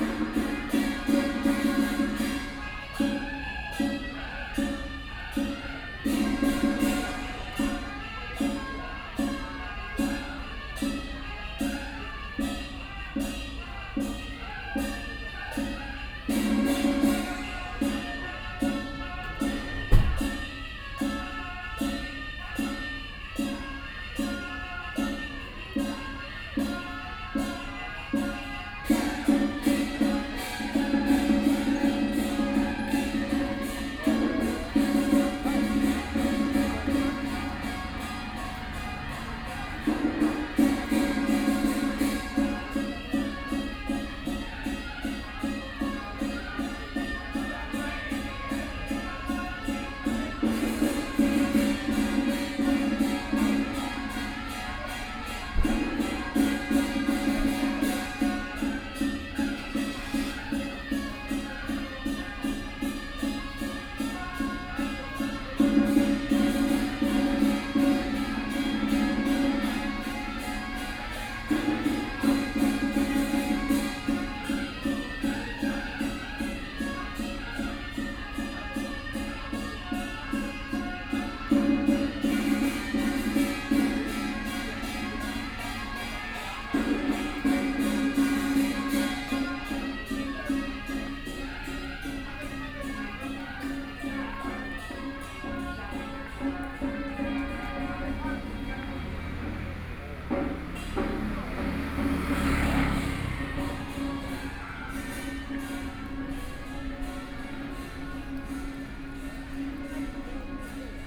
文龍宮, 鼓山區 - Puja
At Temple Square, Puja
Sony PCM D50+ Soundman OKM II